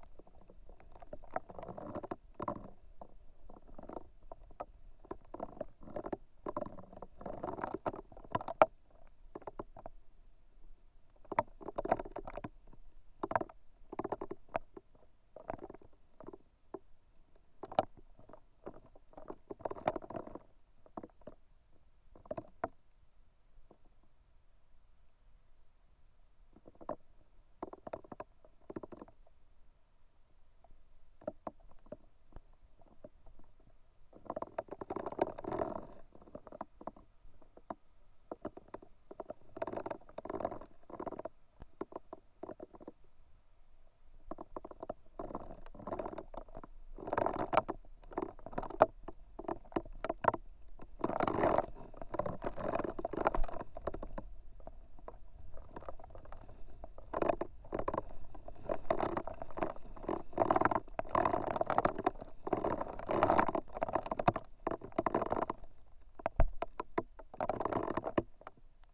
Vyžuonos, Lithuania, lonely reed
Vtzuonos botanical reserve. Lonely dried reed recorded with a pair of contact mics
Utenos apskritis, Lietuva, 10 April